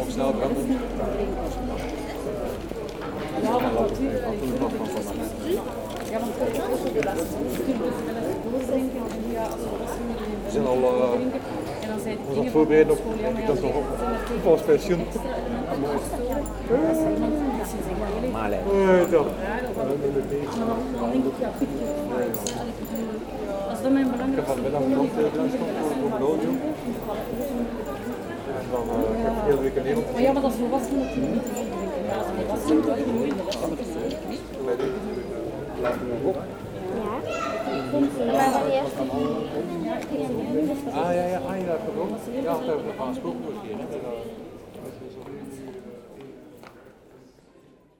Leuven, Belgique - Commercial artery
People discussing on the main commercial artery, children running and screaming.